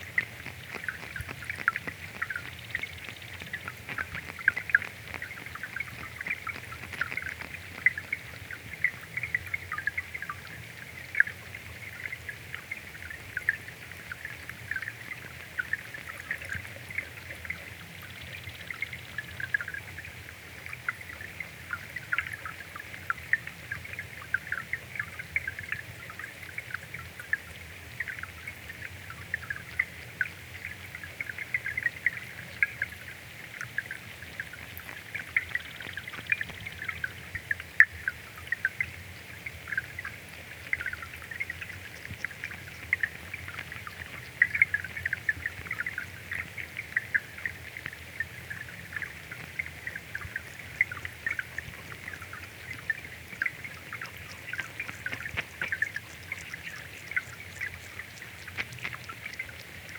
Walking Holme Tadpoles
Shallow water at the edge of Digley Reservoir. Thousands of wriggling tadpoles.